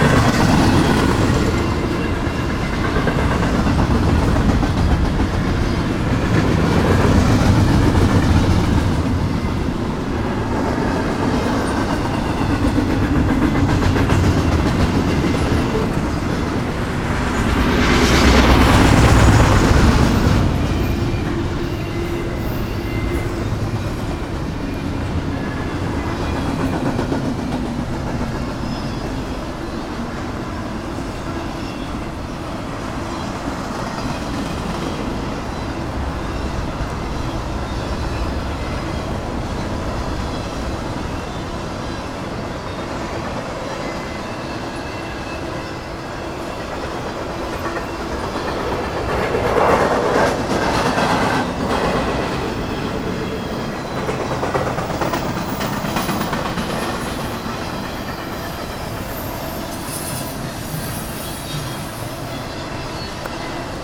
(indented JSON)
{
  "title": "Trihydro industrial site, Lockport, IL, USA - Photographing geo-engineering along Illinois Canal",
  "date": "2013-05-19 12:10:00",
  "description": "Just downstream from the asian carp electrified fence, an experimental barrier to keep the invading Asian Carp from reaching the Lake Michigan. Trihydro Corp. is assisting the Army Corps of Engineers in ongoing dredging and engineering operations, morphing these waterways beyond recognition.",
  "latitude": "41.60",
  "longitude": "-88.06",
  "altitude": "173",
  "timezone": "America/Chicago"
}